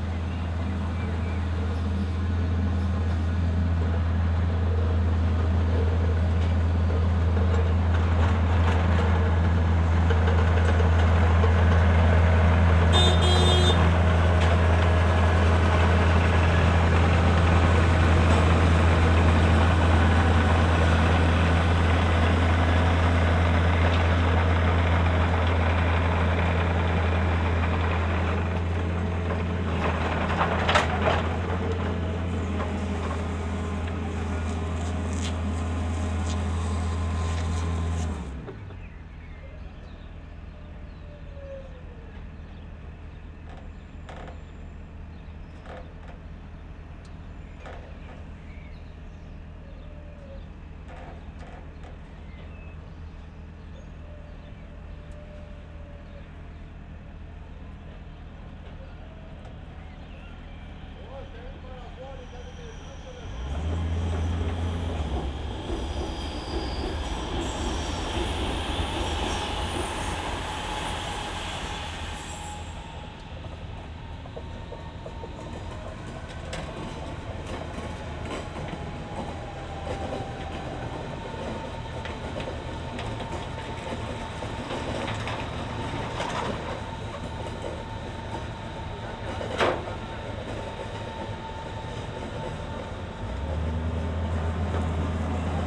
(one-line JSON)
{"title": "Am Treptower Park, Berlin - factory premises between S-Bahn tracks", "date": "2013-05-17 14:40:00", "description": "self-driving mobile crane vehicle moves on a low-loader\n(SD702, Audio technica BP4025)", "latitude": "52.49", "longitude": "13.46", "altitude": "38", "timezone": "Europe/Berlin"}